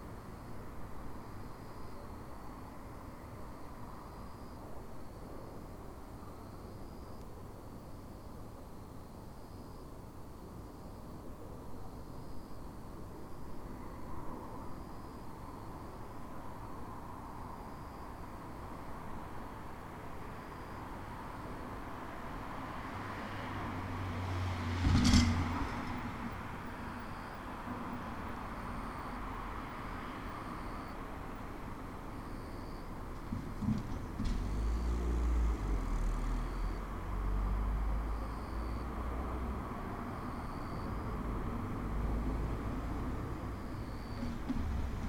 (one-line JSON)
{"title": "ул. Парижской Коммуны, Барнаул, Алтайский край, Россия - Night cicadas", "date": "2018-07-26 02:00:00", "description": "Recorded at 2:00 AM at the old railroad tracks. Cicadas (jr something similar) singing, distant cars passing by, ambient sounds.", "latitude": "53.36", "longitude": "83.78", "altitude": "189", "timezone": "Asia/Barnaul"}